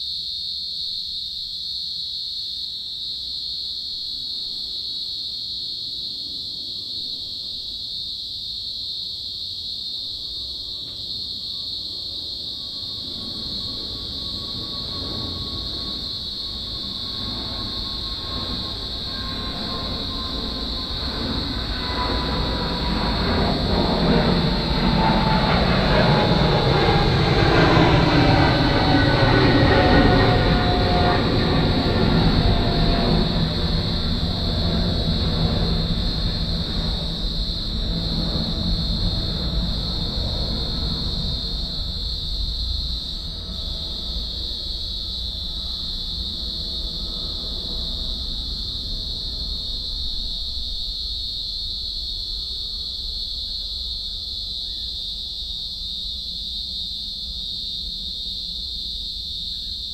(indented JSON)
{
  "title": "cicada and aeroplane drone",
  "date": "2010-12-10 12:27:00",
  "description": "captured for (un)pleasant over drones",
  "latitude": "-33.91",
  "longitude": "151.16",
  "altitude": "13",
  "timezone": "Australia/Sydney"
}